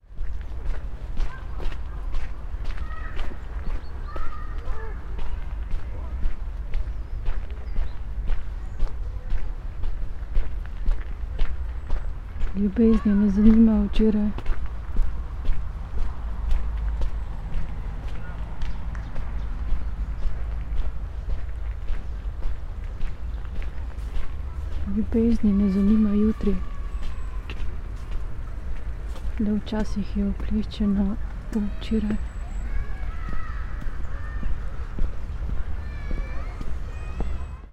sonopoetic path, Maribor, Slovenia - walking poems
spoken words while walking, distant voices of children playing and parents guarding them